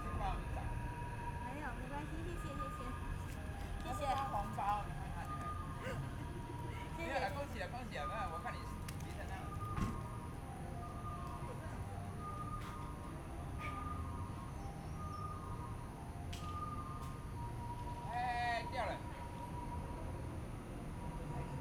花博公園, Taipei City - Dogs barking
The hostess is looking for a dog, Dogs barking, Traffic Sound, Aircraft flying through, Binaural recordings, Zoom H4n+ Soundman OKM II